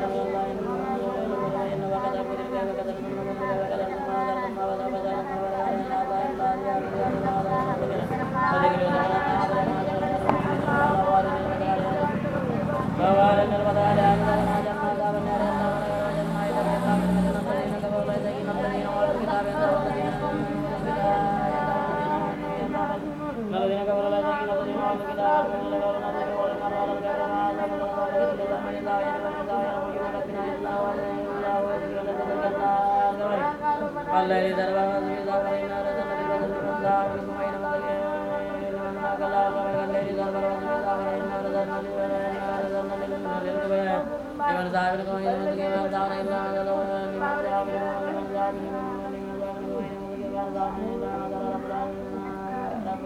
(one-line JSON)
{"title": "Unnamed Road, Markala, Mali - Turbo Quran 3", "date": "1996-01-13 17:49:00", "description": "Turbo Qur'an 3 All together now...", "latitude": "13.68", "longitude": "-6.07", "altitude": "288", "timezone": "Africa/Bamako"}